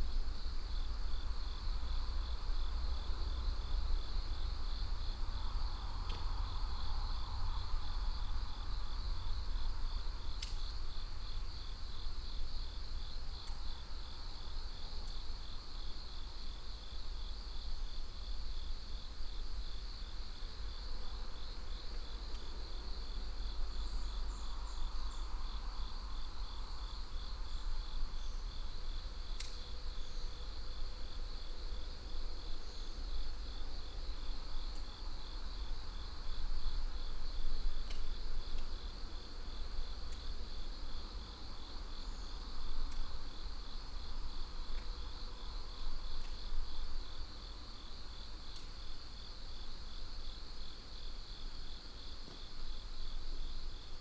의암2터널 Uiham No.2 tunnel 150m
Daybreak visit to a decommissioned train tunnel outside Chuncheon...single track, 150 meter length section of tunnel, slight curve...fairly low resonance inside the tunnel, some interior sounds as well as sound entering from two ends...